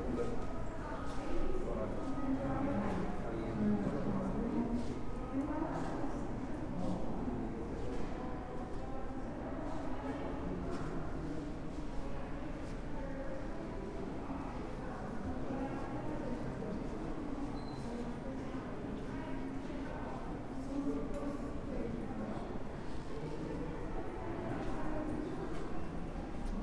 Sitting on a bench at the Aranda De La Parra hospital and then walking through various areas of the ground floor / first floor.
I made this recording on march 19th, 2022, at 1:04 p.m.
I used a Tascam DR-05X with its built-in microphones.
Original Recording:
Type: Stereo
Esta grabación la hice el 19 de marzo de 2022 a las 13:04 horas.
Usé un Tascam DR-05X con sus micrófonos incorporados.